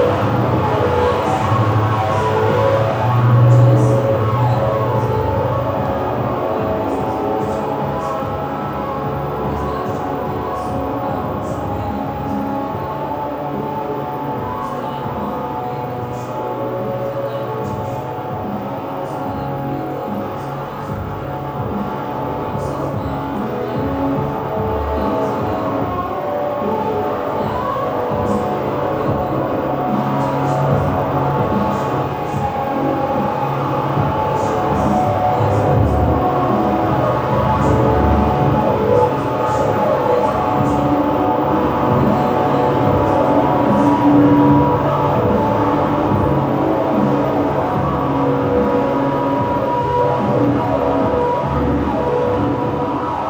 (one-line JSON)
{"title": "Centralna Postaja, Koroška cesta, Maribor - sonic fragment from performance Bič božji", "date": "2014-06-25 21:38:00", "latitude": "46.56", "longitude": "15.64", "altitude": "270", "timezone": "Europe/Ljubljana"}